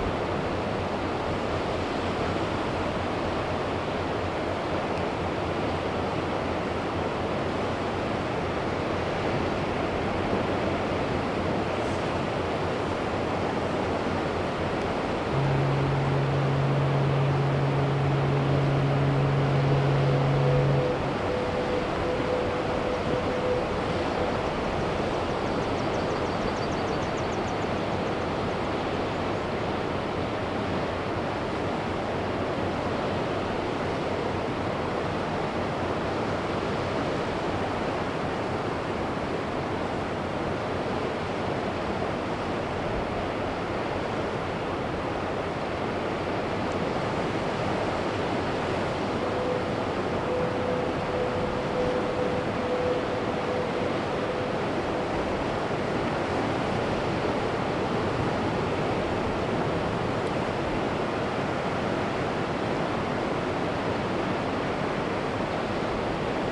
Lizard Point, UK
Southern Most point in England in a fog bank. Ship to shore acoustic signals